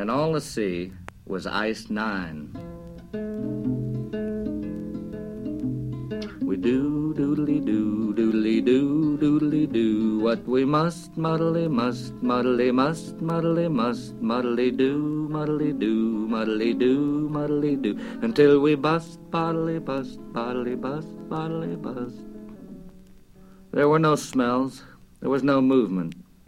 Kurt Vonnegut R.I.P. - Vonnegut reads Cat's Cradle
Germany